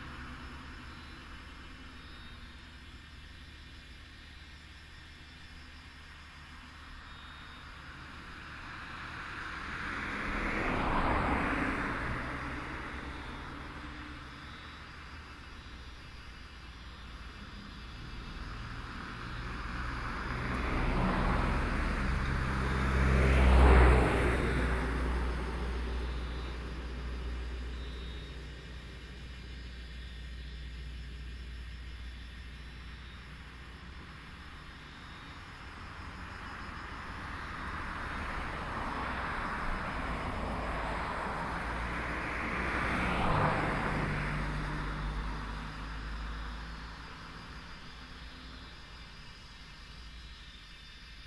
水璉村, Shoufeng Township - Cicadas sound
Cicadas sound, Traffic Sound, Birdsong, Very hot days
Shoufeng Township, 花東海岸公路, 28 August 2014, 17:24